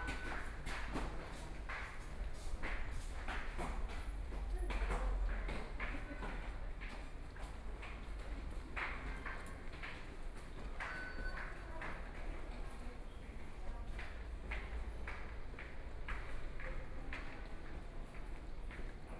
Daan Park Station, Taipei City - Walking into the station
Walking into the station
Sony PCM D50+ Soundman OKM II
27 April, 13:29